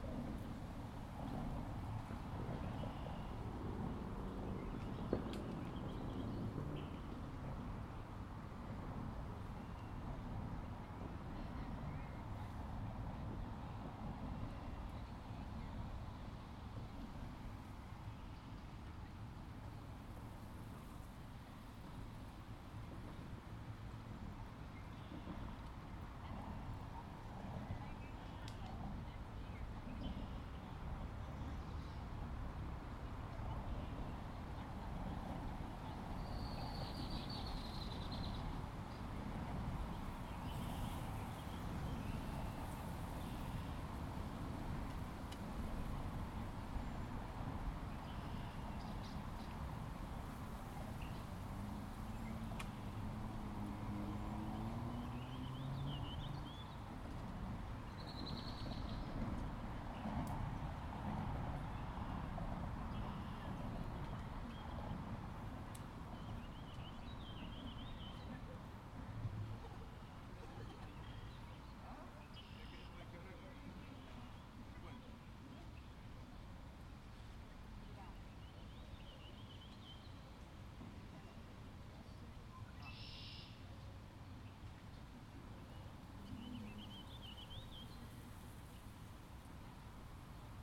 Humber River Park - Between Old Mill Subway station and Bloor street
Recorded right next to the river between the railway and the road.
Recorded on a Zoom H2N